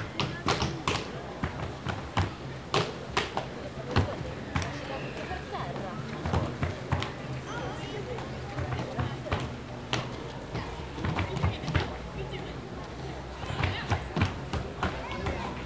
Ciutadella Park, Passeig de Picasso, Barcelona, Barcelona, Spain - Tap dancing in the park
A group of tap dancers were practising in the rotunda. They stood in a circle and took turns to improvise, while a bubble man made huge bubbles nearby that children tried to burst.